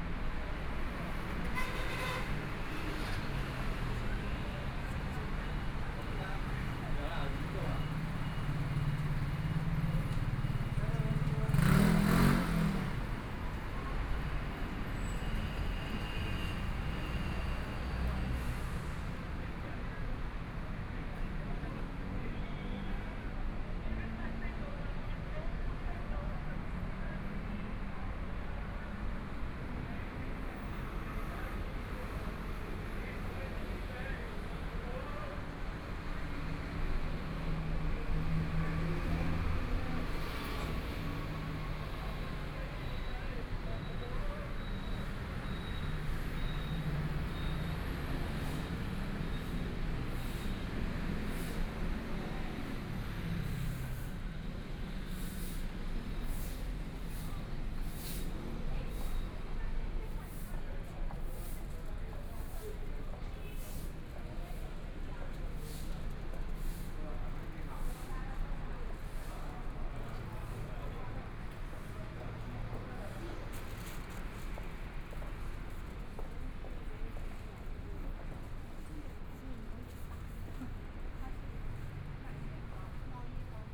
Zhongshan N. Rd., Taipei City - walking on the Road
walking on the Road, Traffic Sound, Motorcycle Sound, Pedestrians on the road, Various shops voices, Binaural recordings, Zoom H4n+ Soundman OKM II